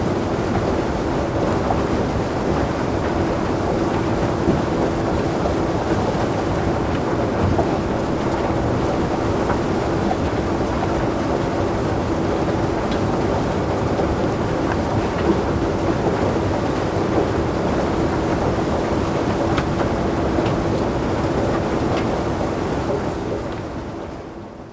Málkov, Czech Republic - Nástup mine - Giant excavator at work
Opencast mining is done by monstrously large machines. The cutting edge is a huge wheel of buckets that gouges the coal seams in circular sweeps. The coal is immediately carried to storage mountains and the railheads on conveyer belts sometimes kilometers long. I am surprised how relatively quiet these machines are given their size.
23 August, 11:52